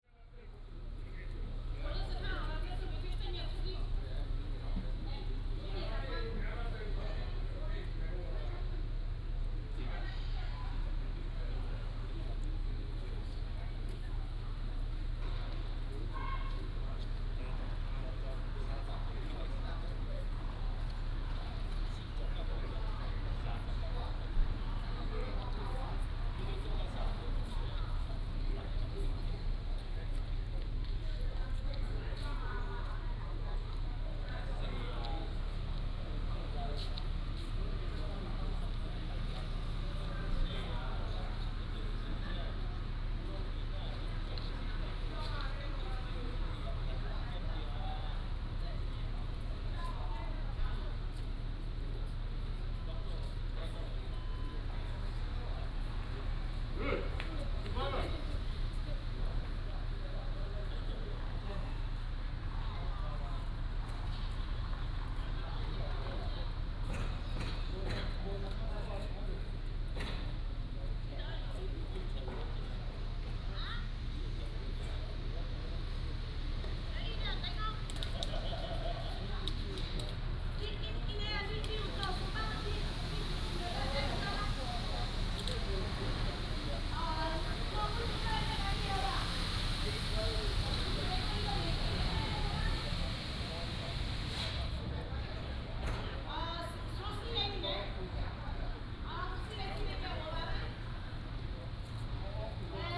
福澳村, Nangan Township - Small Square
In the Small Square, Square in front of the community, Traffic Sound